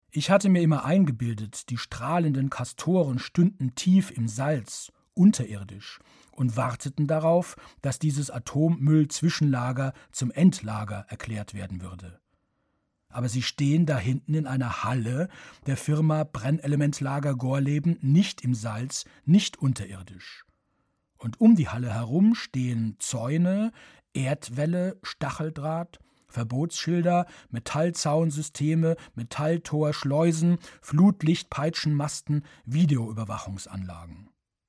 gorleben - am blg-gelaende
Produktion: Deutschlandradio Kultur/Norddeutscher Rundfunk 2009